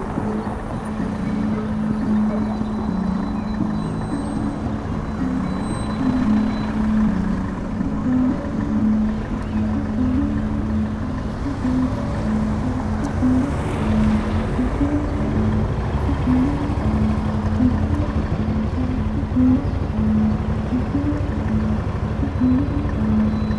Berlin: Vermessungspunkt Maybachufer / Bürknerstraße - Klangvermessung Kreuzkölln ::: 08.05.2012 ::: 16:02
Berlin, Germany, May 2012